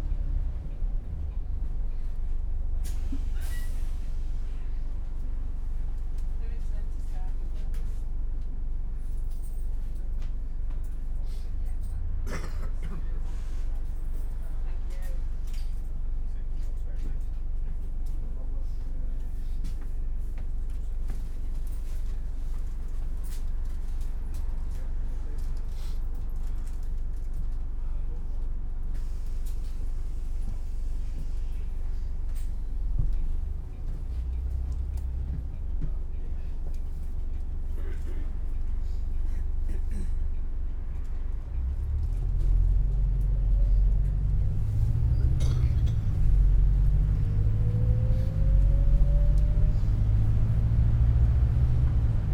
High St, Malton, UK - 843 bus to Scarborough ...
843 bus to Scarborough ... the 07:21 ... travelling through Seamer ... Crossgates ... walk into the towncentre ... lavalier mics clipped to hat ... all sorts of background noises ... voices etc ... recordists curse ... initially forgot to press record ...